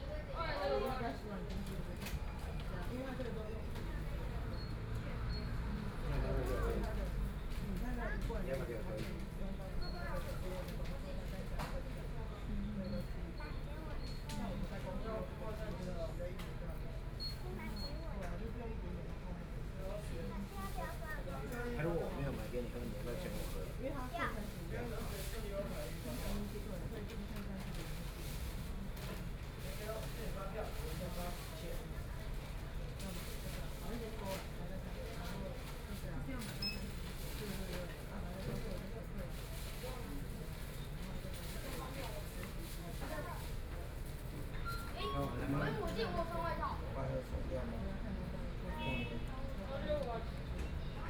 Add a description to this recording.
Sitting inside a convenience store, Binaural recordings, Zoom H6+ Soundman OKM II